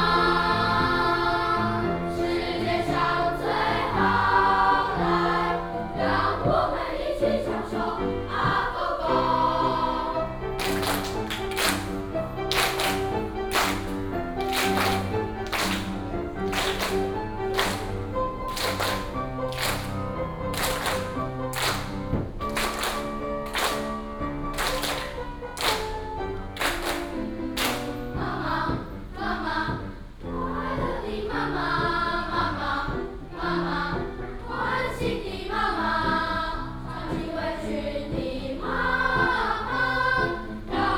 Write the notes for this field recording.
Students Choir, Vocal exercises